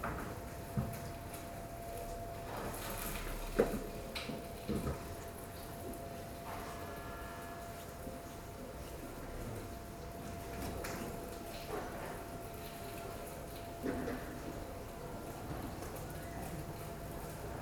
Cardano Street, Pavia, Italy - woman stealing the recorder...
this is more a socially-oriented testimony than a field recording. Might be off-topic but worth the case to post it here, as representative of social environment. Originally intended to record sounds of the street, the recorder was put on a window sill at ground floor. I was standing few meters away. An old woman passes by and intentionally puts the recorder in her pocket. When asked to give it back she justified with meaningless responses "is it a phone?" " ah... interesting thing" and heads away qietly. I was intentionally keeping quiet to understand her reactions.
29 October 2012